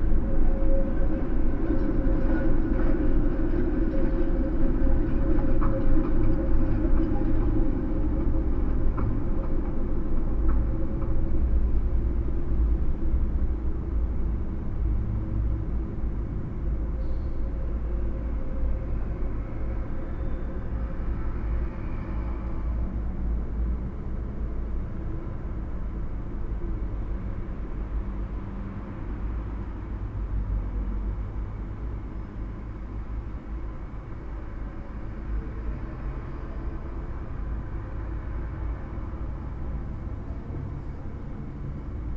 sounds below the street in an old drain tunnel. recorded during a sound workshop organized by the Museum Sztuki of Lodz